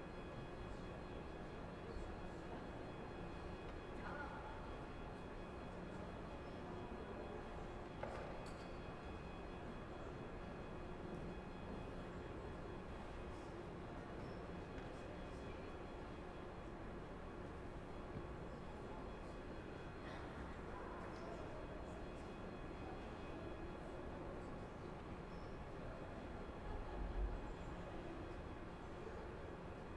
Flushing Meadows Corona Park, Queens, NY, USA - Panorama Of The City Of New York 1
Standing under the flight path of a model plane landing and taking off from a model LaGuardia Airport in the Panorama of The City of New York Exhibit in The Queens Museum
4 March 2017, 14:45